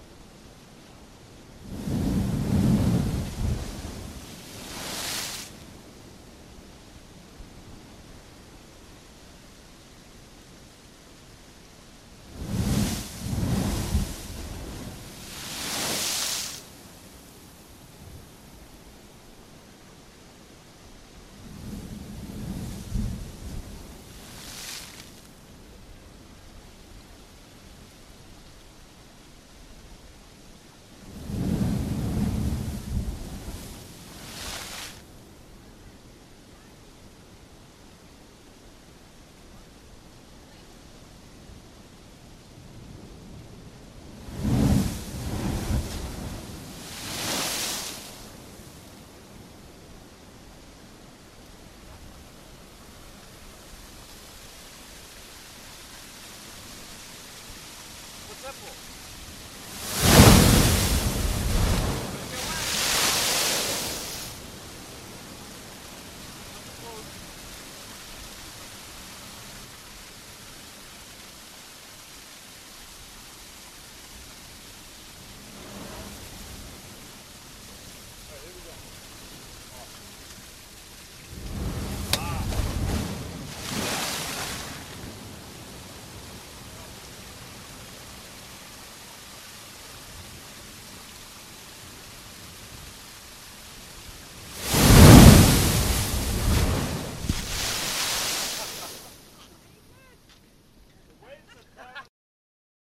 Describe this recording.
Blowholes recorded from nearby Minidisc Recorder